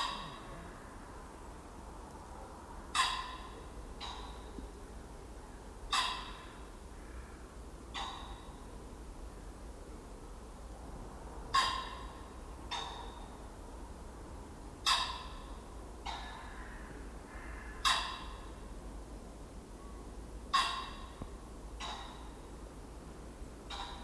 Fen Covert, Suffolk, UK - Evening phesants and buzzard; the woodland darkens
A damp, chilly January evening - pheasants squabble before roost, crows chat to each other and a buzzard mews overhead